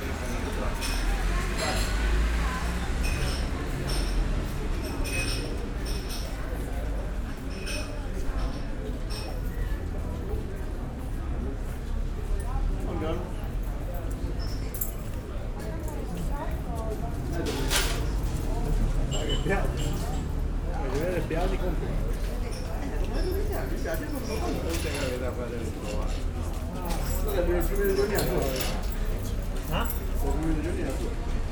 Via di Cavana, Trieste, Italy - outside coffee bar ambience
afternoon ambience in front of a typical coffee bar
(SD702, DPA4060)